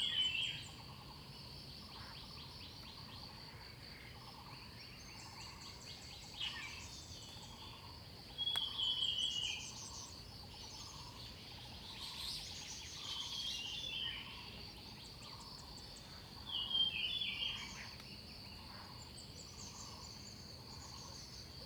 種瓜路, 草湳桃米里 - Birds singing
Birds called, Birds singing
Zoom H2n MS+XY